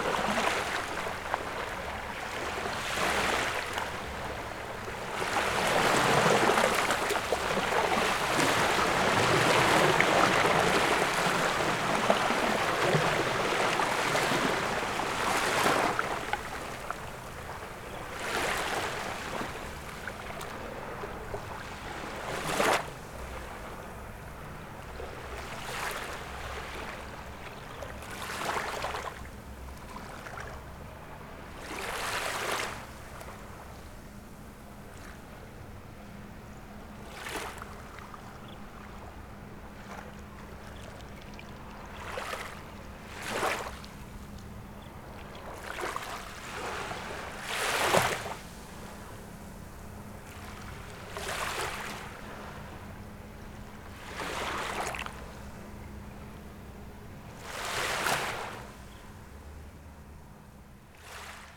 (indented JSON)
{
  "title": "Jumeira 3 - Dubai - United Arab Emirates - DXB Jumeira Beach 6AM",
  "date": "2011-10-23 06:35:00",
  "description": "Recorded with my H4n, a boat passed by and provided some nice ways which you can hear moving from left to right.",
  "latitude": "25.19",
  "longitude": "55.23",
  "altitude": "13",
  "timezone": "Asia/Dubai"
}